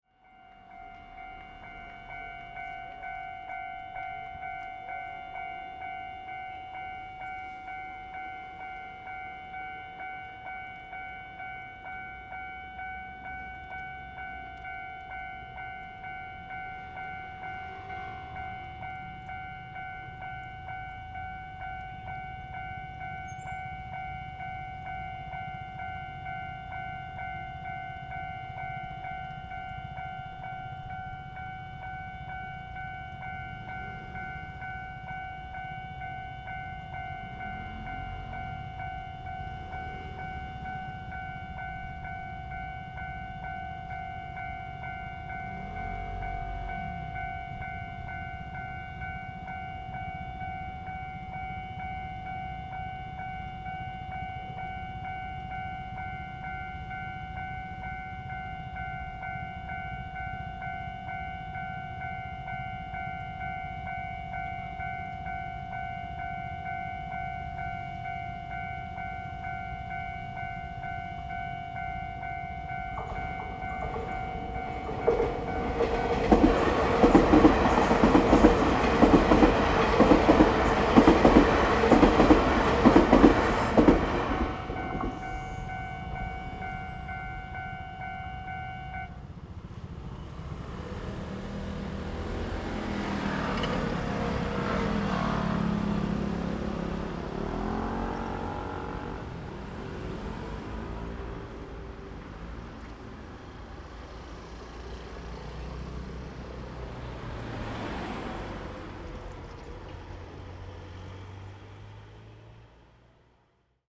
Deyu Rd., Pingzhen Dist., Taoyuan City - Railways
In the railway level road, Traffic sound, Train traveling through
Zoom H2n MS+XY